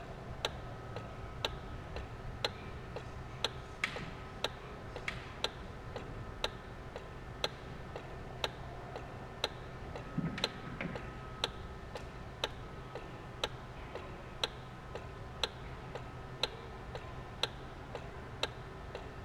Poznam, Mateckiego str, apartment building, underground parking lot - automatic door mechanism
ticking of a automatic door opener. the cracking comes from the planks of the door that had been in the sun and were cooling down.